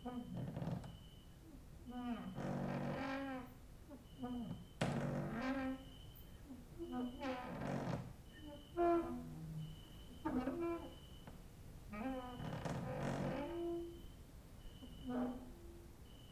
Mladinska, Maribor, Slovenia - late night creaky lullaby for cricket/11
cricket outside, exercising creaking with wooden doors inside
August 2012